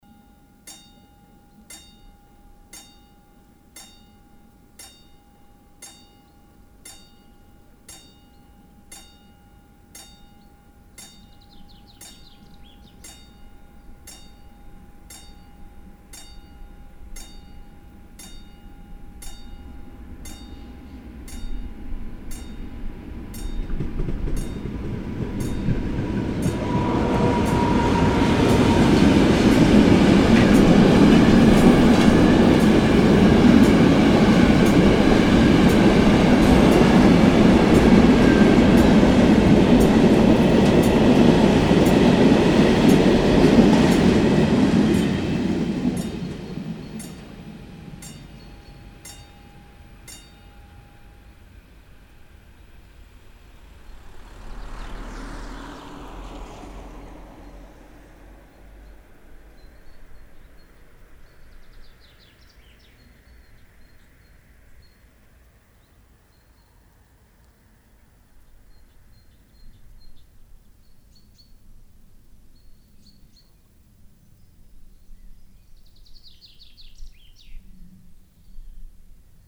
heiligenhaus, wiel, bahngleise, kalkbahn
durchfahrt der kalkbahn an einem offenen bahndurchgang in idyllischem waldtal, nachmittags
- soundmap nrw
project: social ambiences/ listen to the people - in & outdoor nearfield recordings